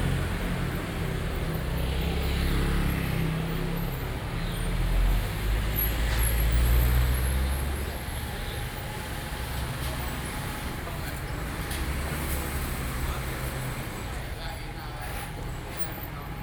Xīndiàn Rd, Xindian District, New Taipei City - Traditional markets
7 November 2012, 08:12